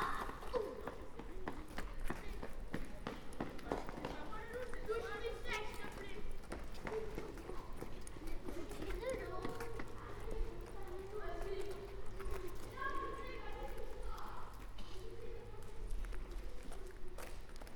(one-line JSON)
{"title": "Schiltigheim, France - La cours de récréation", "date": "2016-01-28 16:36:00", "description": "Recorded by the children of Mermoz School in Strasbourg, using a parabolic reflector, and Zoom H1, they capture the ambiance going in the schoolyard when school is over after 4pm.", "latitude": "48.60", "longitude": "7.74", "altitude": "142", "timezone": "Europe/Paris"}